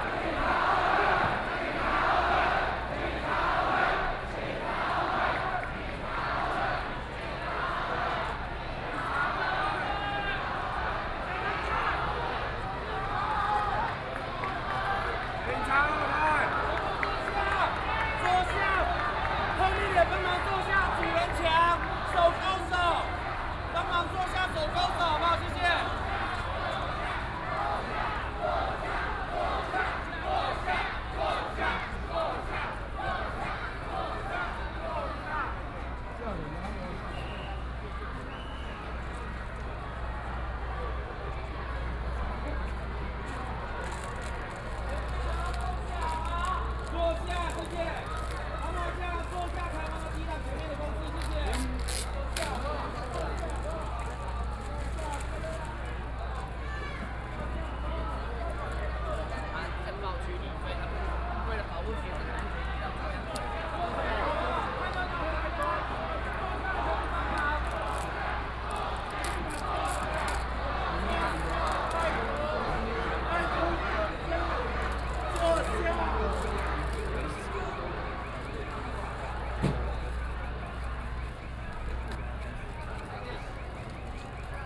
{"title": "行政院, Taiwan - Occupied Executive Yuan", "date": "2014-03-24 02:57:00", "description": "Protest, University students gathered to protest the government, Occupied Executive Yuan\nBinaural recordings", "latitude": "25.05", "longitude": "121.52", "altitude": "12", "timezone": "Asia/Taipei"}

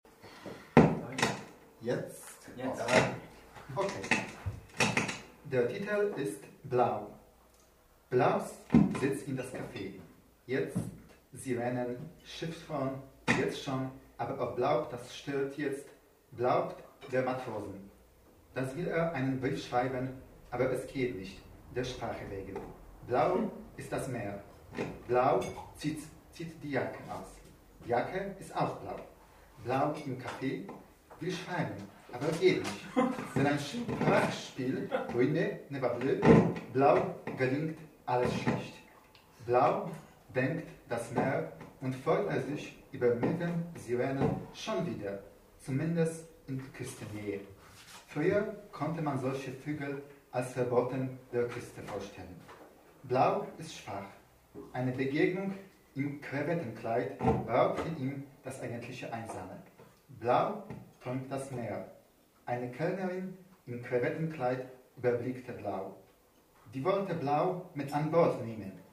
{"title": "Der Kanal, Weisestr. 59. Auschschnitt aus dem 4. Synergeitischen Symposium - Der Kanal, Ausschnitt aus dem 6. Synergeitischen Symposium", "date": "2011-10-22 21:30:00", "description": "The sixth symposium had found again shelter from winterish winds at the warmhearted KANAL. Thousands of loudly read out letters take too long to be pronounced, so we will metonymically present two texts on Blau. Read by two particpants, of different moments, moods and mothertongues.", "latitude": "52.48", "longitude": "13.42", "timezone": "Europe/Berlin"}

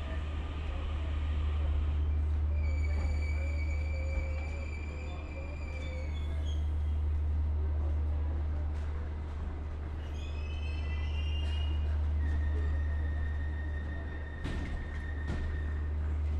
{"title": "Tara Street railway station, Dublin, Co. Dublin, Irlande - Waiting for the train", "date": "2019-06-23 15:00:00", "description": "On hollydays, visiting Dublin, Waiting for the \"dart\". Howth Direction !\nRecording Gear : 2 primo EM172 + Mixpre 6 (AB)\nHeadphones required.", "latitude": "53.35", "longitude": "-6.25", "altitude": "10", "timezone": "Europe/Dublin"}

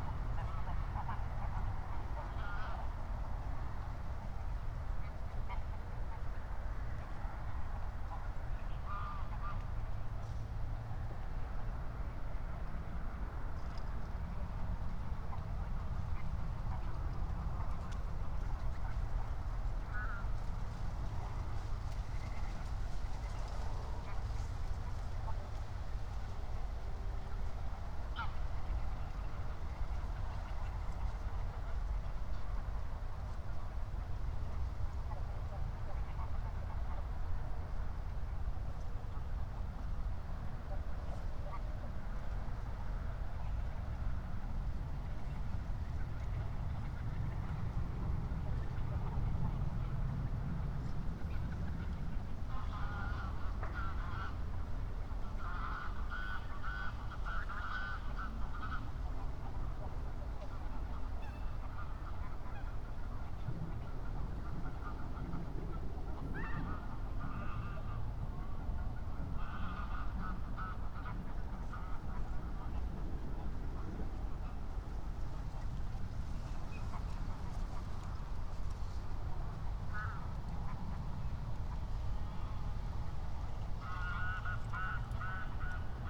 {"date": "2021-10-01 23:39:00", "description": "23:39 Berlin, Buch, Moorlinse - pond, wetland ambience", "latitude": "52.63", "longitude": "13.49", "altitude": "51", "timezone": "Europe/Berlin"}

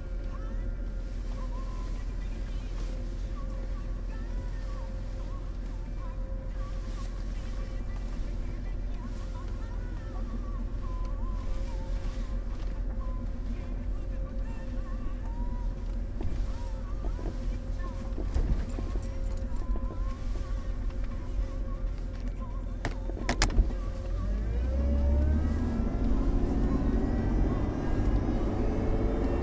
{"title": "Frasier Meadows, Boulder, CO, USA - drive home", "date": "2013-02-03 21:15:00", "latitude": "40.00", "longitude": "-105.24", "altitude": "1624", "timezone": "America/Denver"}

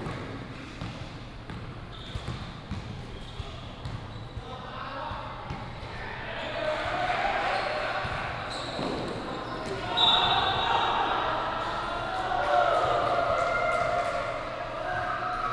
{"title": "埔里綜合球場, Puli Township, Nantou County - Basketball game", "date": "2016-09-18 12:54:00", "description": "Basketball game, the basketball court", "latitude": "23.97", "longitude": "120.97", "altitude": "459", "timezone": "Asia/Taipei"}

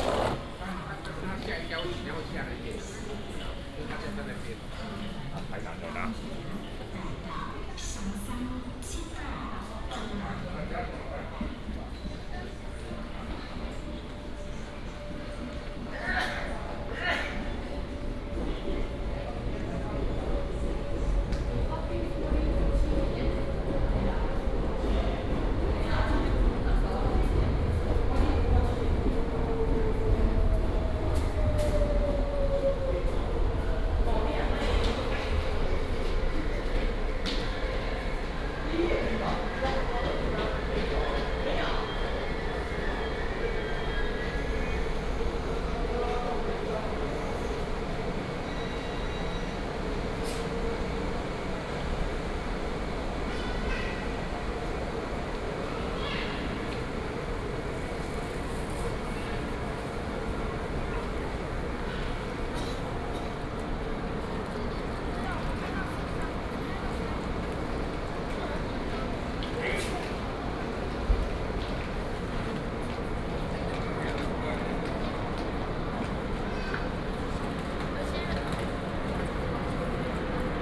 {"title": "嘉義火車站, Taiwan - Walking at the train station", "date": "2018-02-17 08:12:00", "description": "Walking at the train station, From the station lobby to the station platform, The train arrived\nBinaural recordings, Sony PCM D100+ Soundman OKM II", "latitude": "23.48", "longitude": "120.44", "altitude": "35", "timezone": "Asia/Taipei"}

